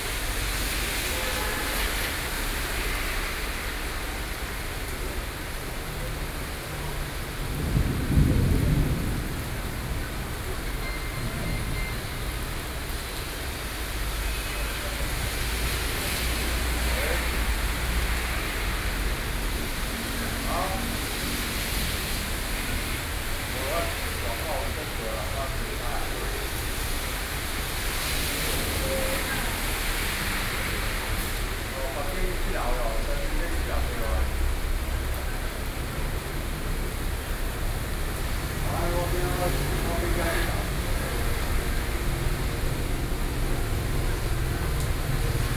Zhongshan District, Taipei - Thunderstorm
Traffic Noise, Sound of conversation among workers, Sony PCM D50, Binaural recordings